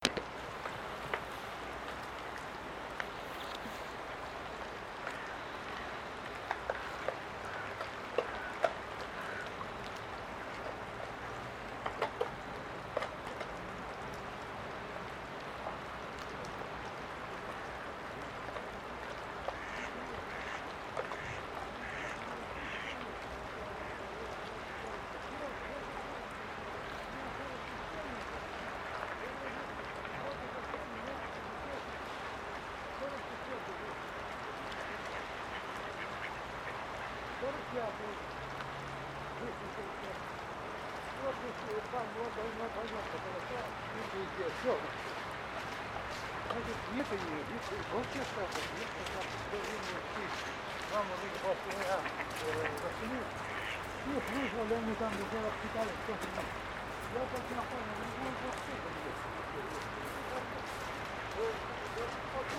ул. Ригачина, Петрозаводск, Респ. Карелия, Россия - On the bridge over the Lososinka river
On the bridge over the Lososinka river, not far from the place where it flows into lake Onega. You can hear the water gurgling, the ice crunching, the ducks quacking, the conversation of men who pass by.